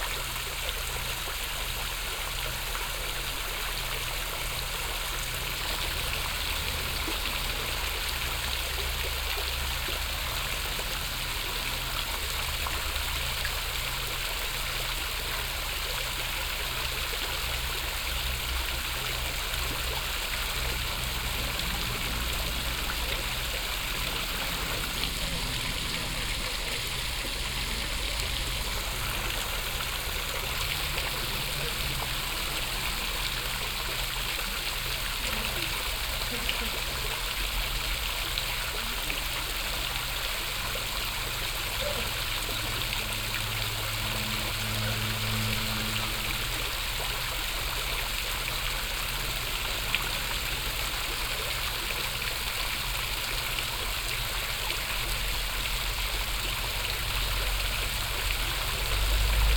rudolstadt, anger, fountain
At the square in front of the historical Schiller theatre.
The sound of a fountain. In the background traffic and a train passing by.
soundmap d - topographic field recordings and social ambiences
Rudolstadt, Germany, 6 October 2011